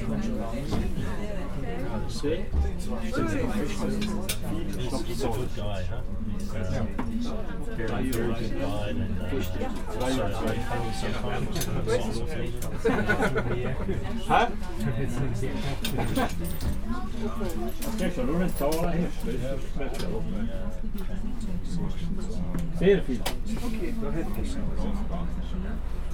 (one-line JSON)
{"date": "2011-07-08 17:05:00", "description": "Postauto nach Wyler im lötschental zum Umsteigen in die Seilbahn. es wird immer Rucksackiger und Bergschuhiger", "latitude": "46.38", "longitude": "7.75", "timezone": "Europe/Zurich"}